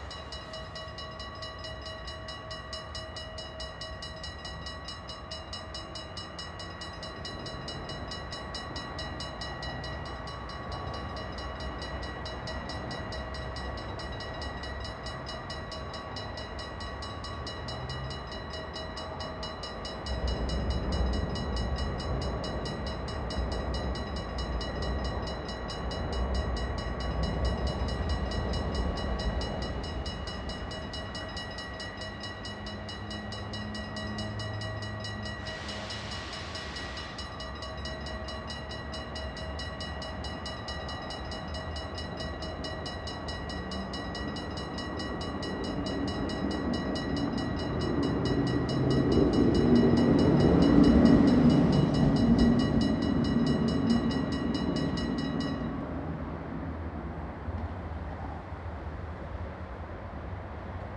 Rivierenbuurt-Zuid, The Hague, The Netherlands - train and bells
train crossing with warning bell. MS recording
Zuid-Holland, Nederland, European Union, February 2013